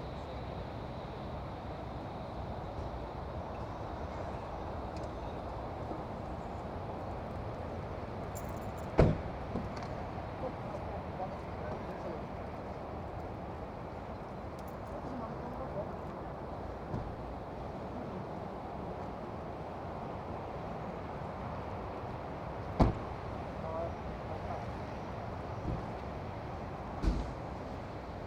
Aire de Bois d'Arsy, Autoroute du Nord, Remy, France - Ambience along the highway
Tech Note : Sony PCM-M10 internal microphones.
France métropolitaine, France, August 2022